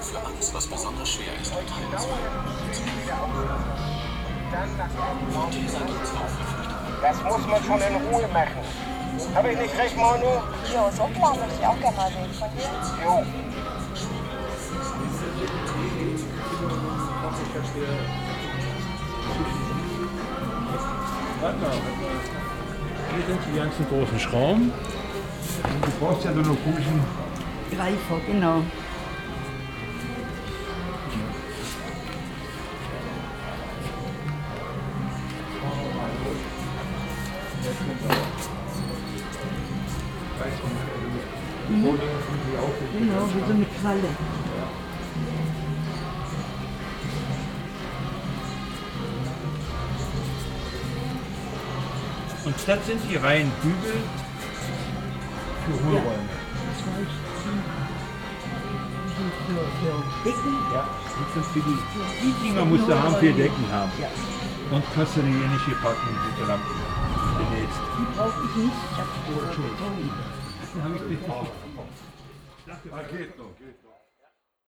Frillendorf, Essen, Deutschland - essen, frillendorf, construction market
In einem Baumarkt. Der Klang verschiedener Werbemonitore und Kunden in den Gängen des Marktes..
Inside a construction market. The sound of different advertising monitors and customers in the corridors of the market.
Projekt - Stadtklang//: Hörorte - topographic field recordings and social ambiences